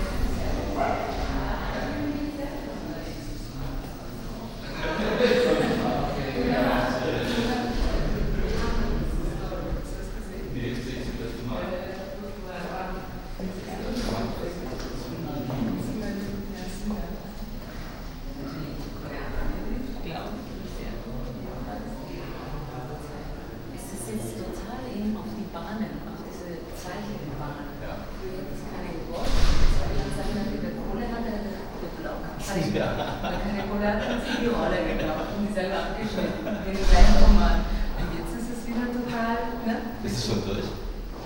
cologne, filzengraben, khm, neubau anbau
schritte und gespräche zur vernissage von studentenarbeiten auf der altitude 08 der Kunsthochschule für Medien (KHM)
soundmap nrw: social ambiences/ listen to the people - in & outdoor nearfield recordings
17 July 2008, 14:00